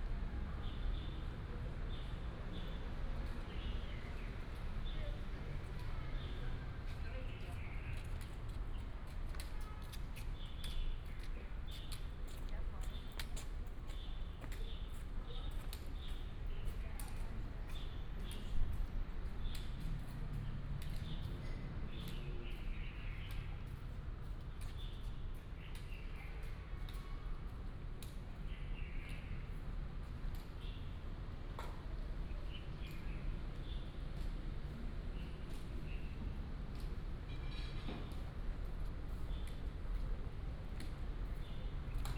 Temple of Confucius, Changhua City - Inside the temple
Inside the temple, Bird call, Traffic sound, Navigation